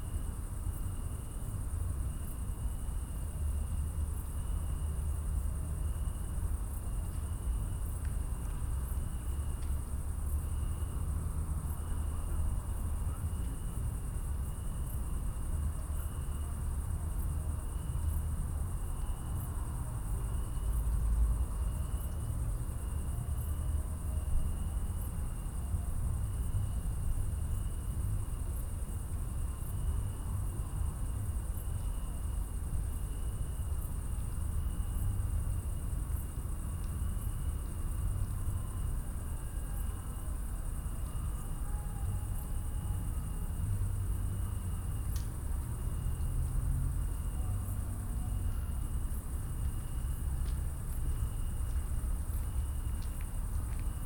city park, Maribor - saturday night city hum and crickets